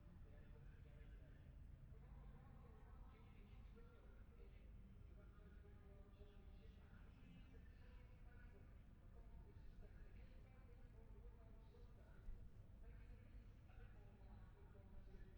Silverstone Circuit, Towcester, UK - british motorcycle grand prix 2021 ... moto three ...
moto three qualifying one ... wellington straight ... dpa 4060s to Zoom H5 ...
England, United Kingdom, 28 August 2021, 12:35